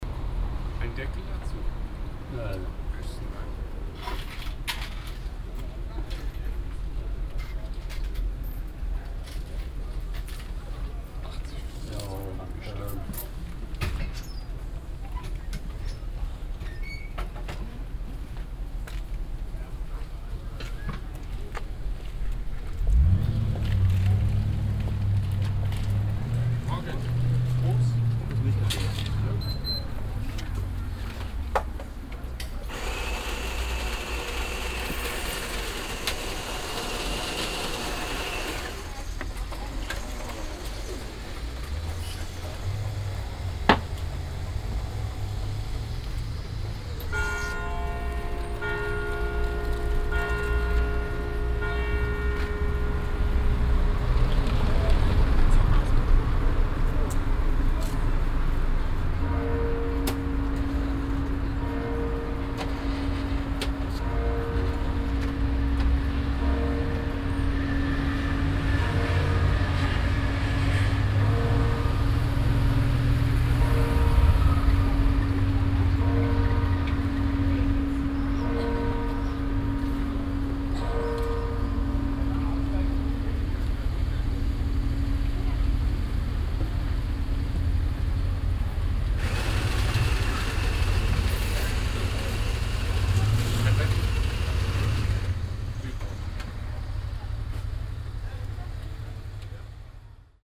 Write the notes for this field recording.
kaffeemaschine, kunden, verkehrsgeräusche, das läuten dere kirchglocken der agneskirche, morgens, soundmap nrw: social ambiences/ listen to the people - in & outdoor nearfield recordings, listen to the people